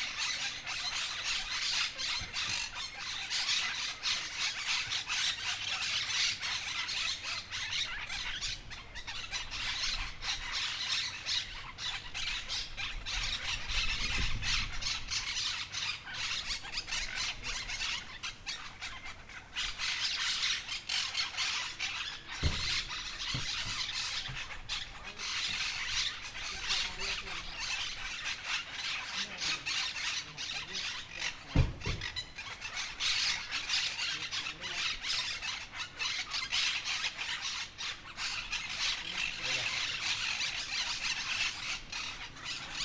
Calle Lavadero, Humanes de Madrid, Madrid, España - Grupo de Cotorras Argentinas [Myiopsitta monachus]
Grupo de cotorrillas cotorreando un rato! Pasé cerca y escuché a un grupo de aves muy escandalosas ellas... resultaron ser Cotorras Argentinas [Myiopsitta monachus]. Las grabé en su rato de charla.